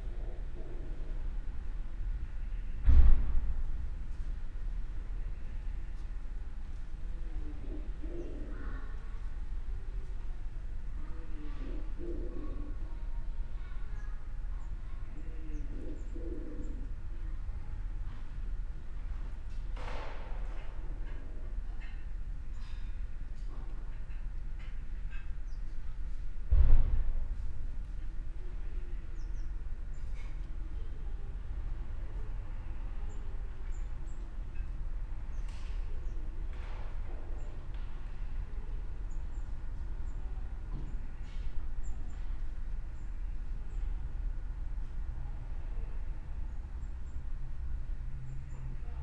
Berlin Bürknerstr., backyard window - ambience at noon, a pidgeon
ambience in the backyard, Saturday around noon, a pidgeon makes strange sounds.
(Sony PCM D50, Primo EM172)